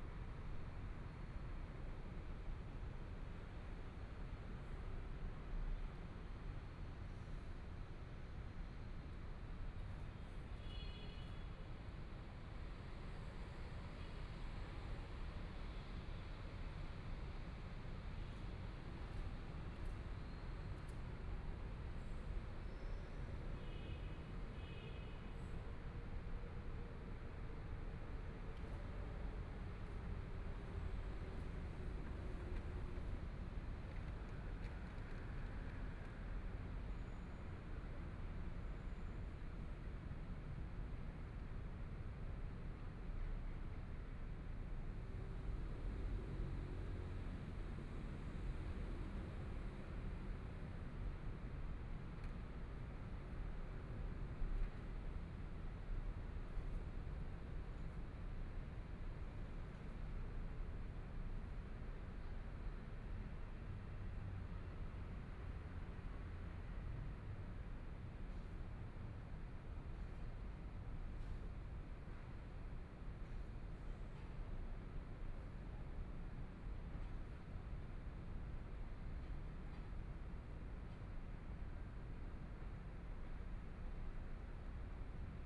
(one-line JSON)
{"title": "Chung Shan Creative Hub, Taipei - in the square", "date": "2014-02-06 13:18:00", "description": "Sitting in the square, Environmental sounds, Traffic Sound, Binaural recordings, Zoom H4n+ Soundman OKM II", "latitude": "25.06", "longitude": "121.52", "timezone": "Asia/Taipei"}